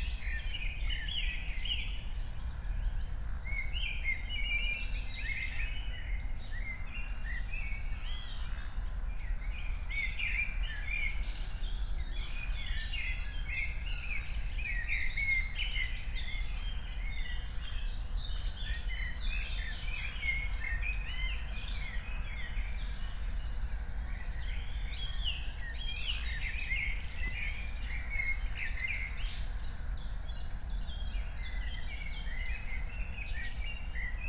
Königsheide, Berlin - forest ambience at the pond
8:00 bells, frog, crows and others
Deutschland